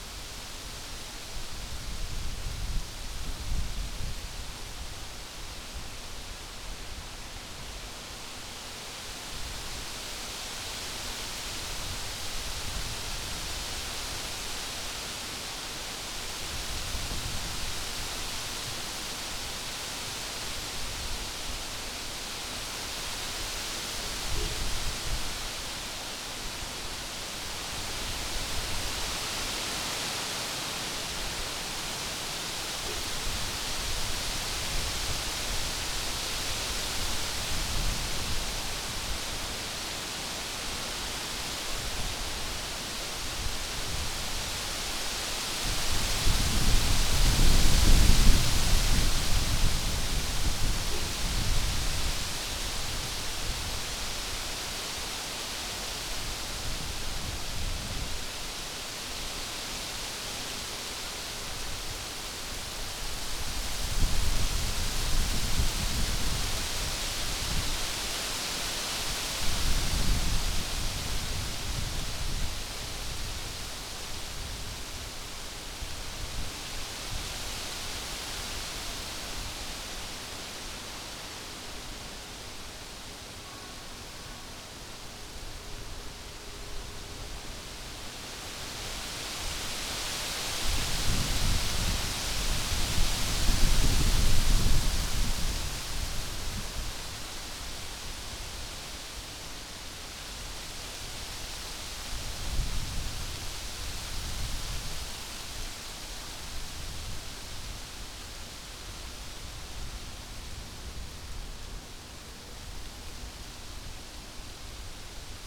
UAM Campus Morasko - poplar trees
intense swoosh of a few poplar trees.
14 June, 12:41